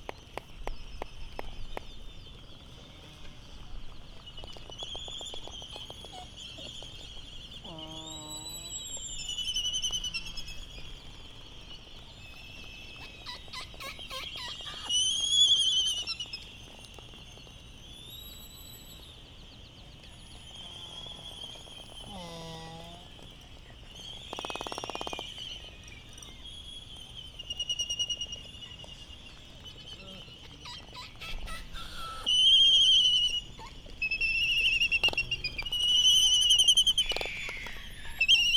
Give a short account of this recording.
Laysan albatross dancing ... Sand Island ... Midway Atoll ... bird calls ... Laysan albatross ... canary ... open lavaliers on mini tripod ... voices ... traffic ... doors banging ...